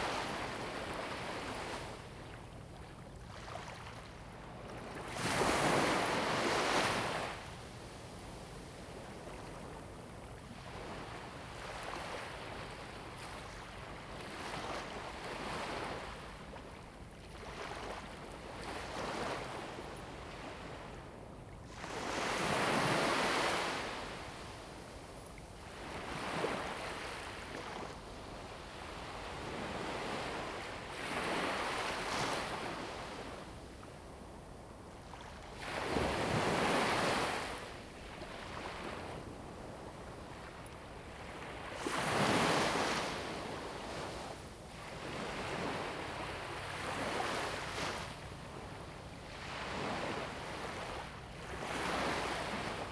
隘門沙灘, Huxi Township - the waves
In the beach, Sound of the waves
Zoom H2n MS +XY
Husi Township, 澎20鄉道, 21 October 2014